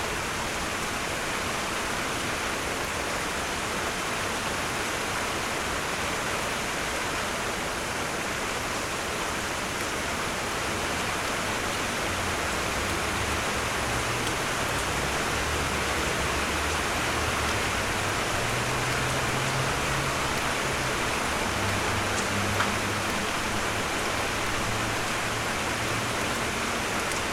Rainstorm, Armstrong Ave
Rainstorm heard through the window - Armstrong Ave, Heaton, Newcastle-Upon-Tyne, UK
Newcastle Upon Tyne, UK, 29 June 2010